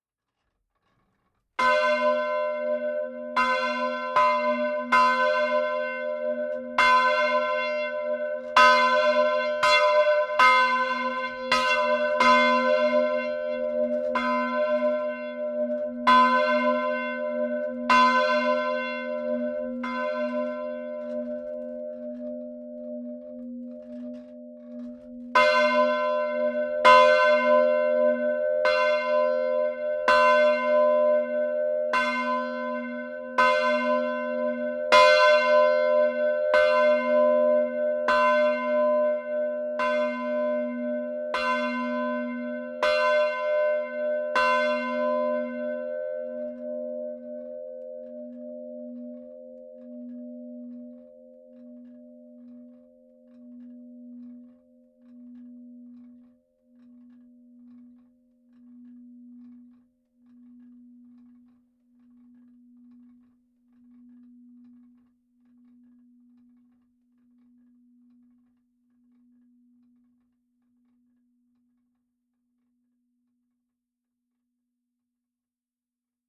Saint-Jean-Pierre-Fixte, France - St-Jean-Pierre-Fixte (Eure-et-Loir)
St-Jean-Pierre-Fixte (Eure-et-Loir)
Volée automatisée